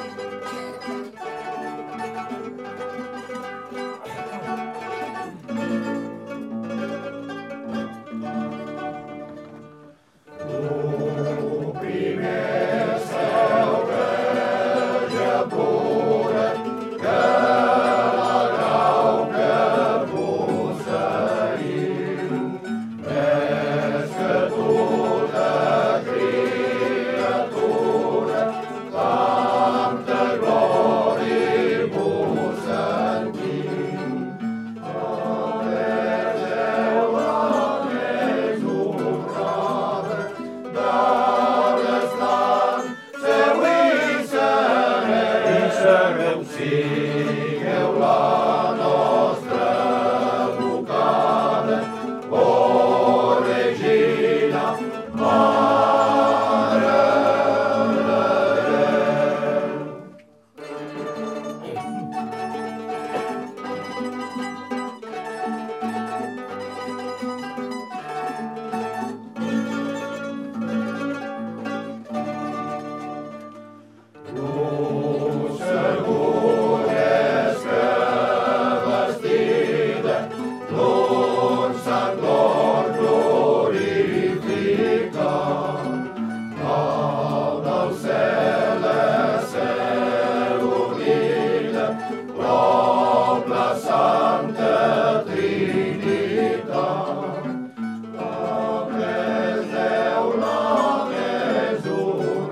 France, Ille, Goigs dels Ous - Goigs dels Ous
Goigs dels Ous (Joy of Eggs) are traditional Easter songs, ancient Catalan hymns sung by male choirs, singing at people's places at night the two weeks before Easter.